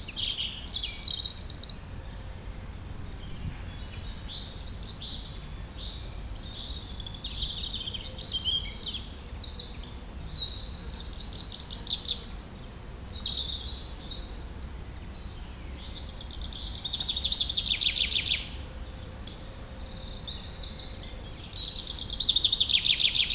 Genappe, Belgique - Woods
Sound of the nature in a bucolic landscape, distant calls from the birds.